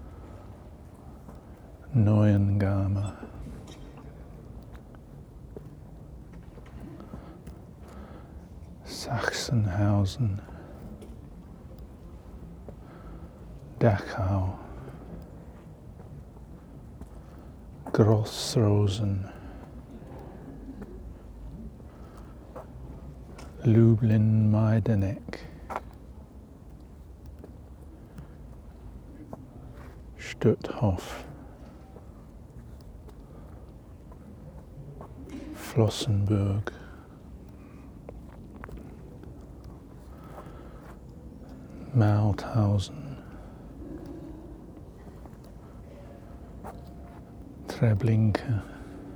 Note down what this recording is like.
Close to the entrance of the cemetary, the memorial is a circle of stones each bearing the name a concentration camps.